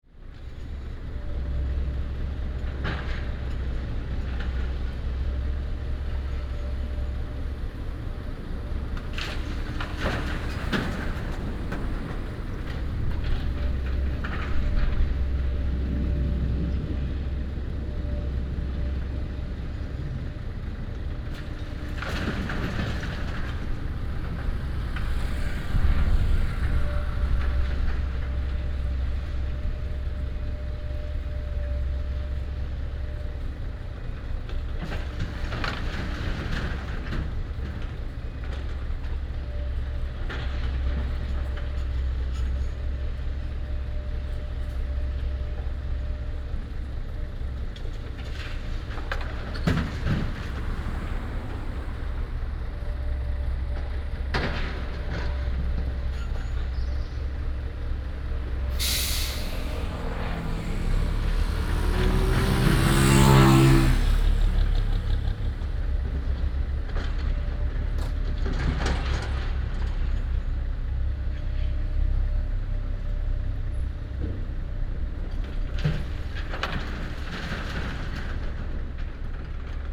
Demolition of old house, traffic sound, Binaural recordings, Sony PCM D100+ Soundman OKM II